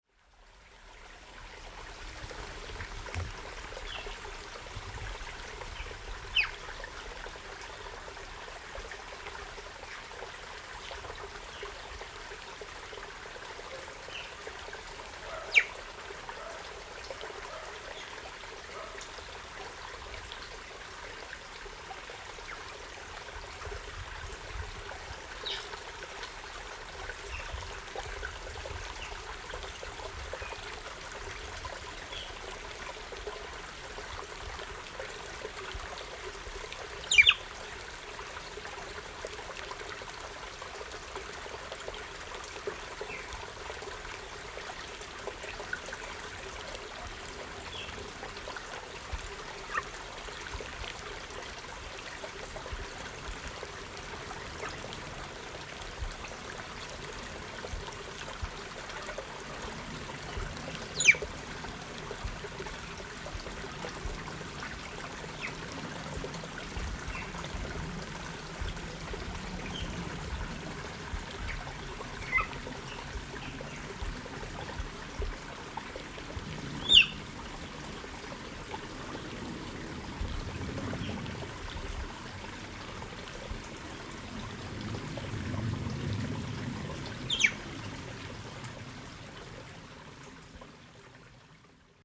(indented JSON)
{
  "title": "Shuangcheng Rd., Xindian Dist. - Bird and Stream",
  "date": "2012-01-18 16:36:00",
  "description": "Bird and Stream, Aircraft flying through\nZoom H4n + Rode NT4",
  "latitude": "24.94",
  "longitude": "121.50",
  "altitude": "217",
  "timezone": "Asia/Taipei"
}